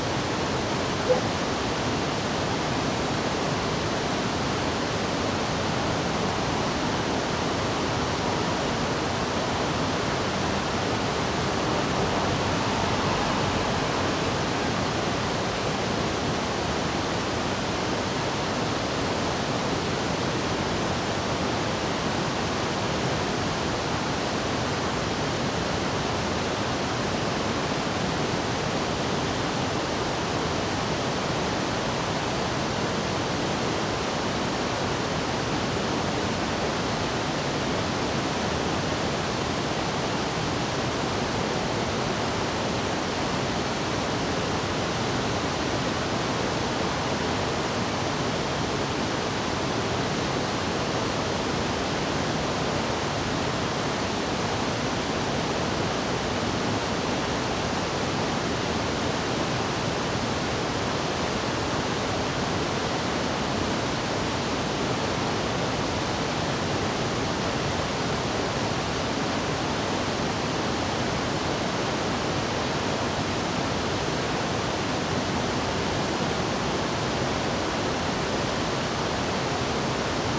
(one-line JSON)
{"title": "Wulai Waterfall, 烏來區烏來里 - Facing the Waterfall", "date": "2016-12-05 09:24:00", "description": "Facing the waterfall, Traffic sound\nZoom H2n MS+ XY", "latitude": "24.85", "longitude": "121.55", "altitude": "182", "timezone": "GMT+1"}